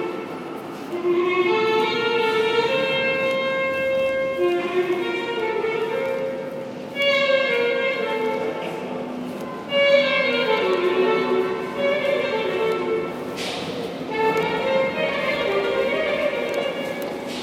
Kreuzberg, Berlin, Deutschland - Zwischengeschoss mezzanine Moritzplatz
Technically, this is not a good recording: I had a crackling paper bag in my arm, just an iphone to record and no headphones. The wind of the subway blows into the microphone, but it shows well the atmosphere of the Berlin intermediate worlds.
Under the Moritzplatz is a flat round intermediate floor with four entrances and exits, which serves as a pedestrian underpass and subway entrance. I walk around without a goal. A woman with a heavy shopping basket crosses the hall, quietly booming. I accompany her a little. Her murmuring singing overlaps with the clarinet. The clarinetist sits near the subway entrance leaned at a column and plays whenever people appear - in between, he pauses. Sometimes very long pauses (in this recording the breaks are very short). He always plays the same riffs. The reverb is impressive. Few people go and come from all directions. Only when a subway arrives the hall suddenly is full. Almost nobody stops here - there is nothing else to do but exit.